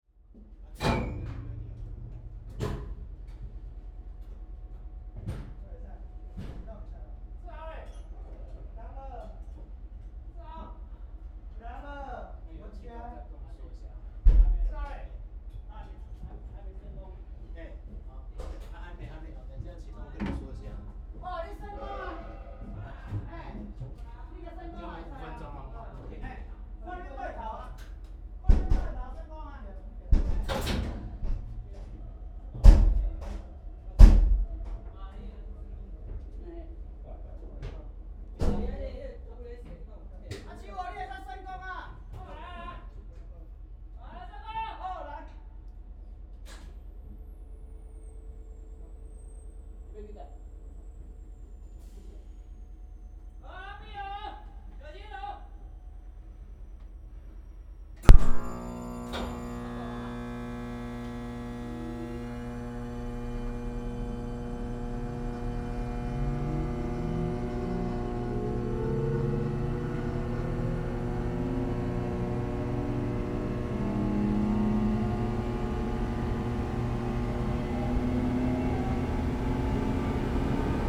富岡機廠, Yangmei District, Taoyuan City - The train starts
The train starts, Train Factory
Zoom H6 MS +Rode NT4 ( Railway Factory 20140806-14)
Yangmei District, Taoyuan City, Taiwan, August 2014